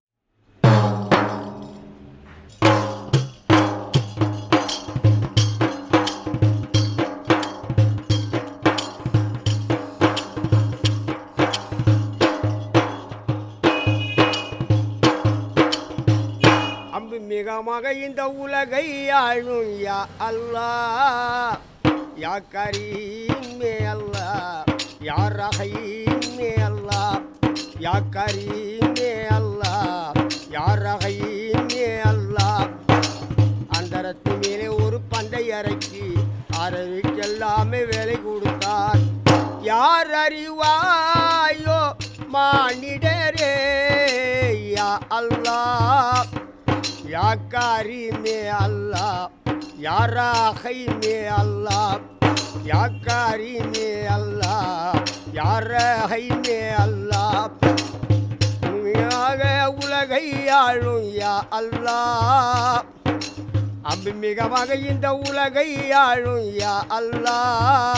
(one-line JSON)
{"title": "Hyder Ali St, MG Road Area, Puducherry, Inde - Pondicherry - Le musicien du vendredi.", "date": "2008-04-25 13:00:00", "description": "Pondicherry - 8 rue Hyder Ali\nLe musicien du vendredi.", "latitude": "11.93", "longitude": "79.83", "altitude": "9", "timezone": "Asia/Kolkata"}